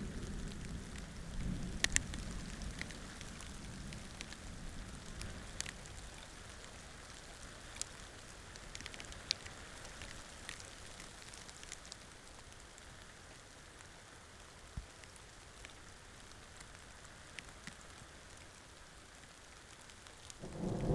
Warmbad, South Africa - A Storm while camping
Nokeng Eco Lodge. Equipment set up to record the Dawn Chorus the following day. EM172's on a Jecklin disc to SD702